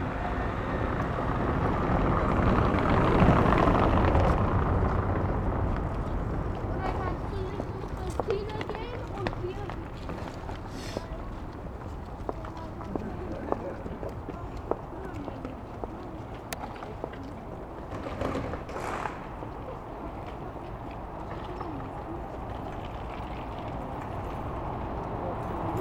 Berlin: Vermessungspunkt Friedel- / Pflügerstraße - Klangvermessung Kreuzkölln ::: 13.02.2011 ::: 17:57
Berlin, Germany